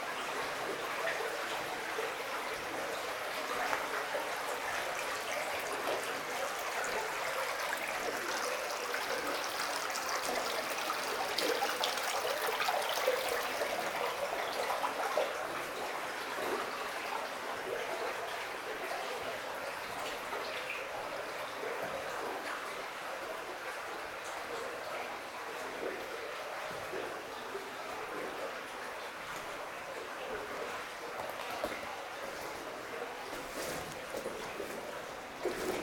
{"title": "Differdange, Luxembourg - Underground mine", "date": "2015-11-22 11:30:00", "description": "A deep underground mine ambience, walking in water, mud and abandoned tunnels.", "latitude": "49.52", "longitude": "5.86", "altitude": "405", "timezone": "Europe/Luxembourg"}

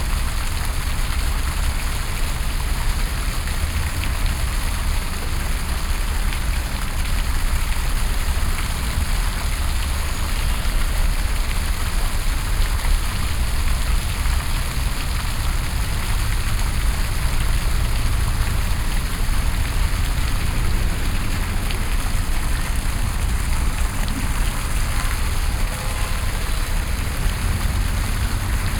{"title": "Washington DC, Dupont Circle, Dupont Circle Fountain", "date": "2011-11-16 16:01:00", "description": "USA, Virginia, Washington DC, Fountain, Binaural", "latitude": "38.91", "longitude": "-77.04", "altitude": "27", "timezone": "America/New_York"}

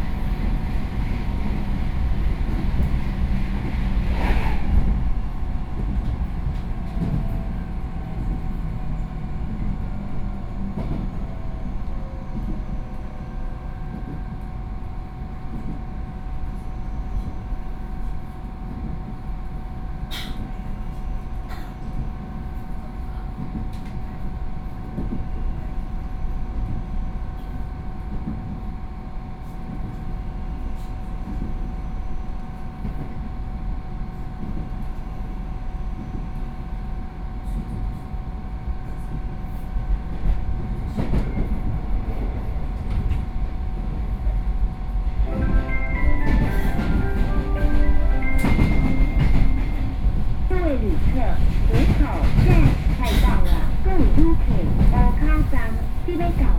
{"title": "Hukou, Hsinchu - On the train", "date": "2013-02-08 18:34:00", "latitude": "24.91", "longitude": "121.05", "altitude": "85", "timezone": "Asia/Taipei"}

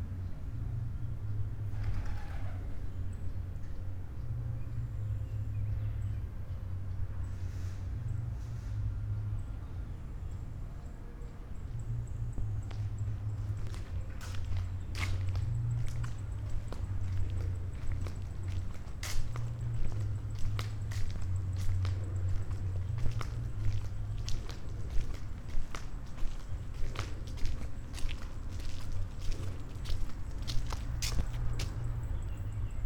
{"title": "Buje, Croatia - old lady knitting by the window", "date": "2014-07-09 12:25:00", "description": "quiet times around stony village, short conversation between neighbors, doors, keys, crickets ...", "latitude": "45.41", "longitude": "13.66", "altitude": "218", "timezone": "Europe/Zagreb"}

